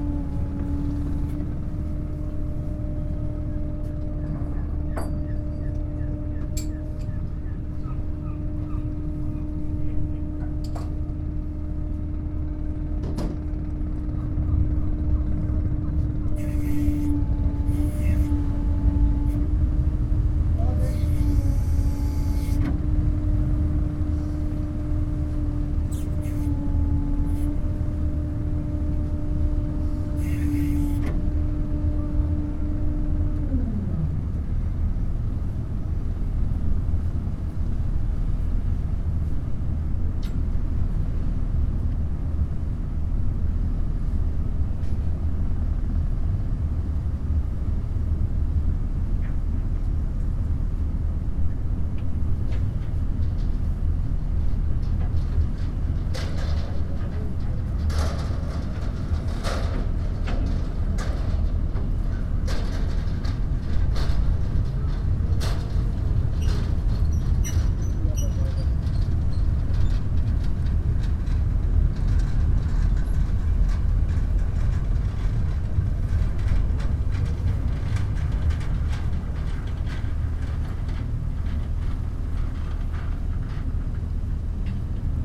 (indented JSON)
{
  "title": "helgoland südhafen - funny girl läuft aus",
  "date": "2014-02-11 16:10:00",
  "description": "funny girl läuft aus dem helgoländer südhafen aus",
  "latitude": "54.17",
  "longitude": "7.90",
  "timezone": "Europe/Berlin"
}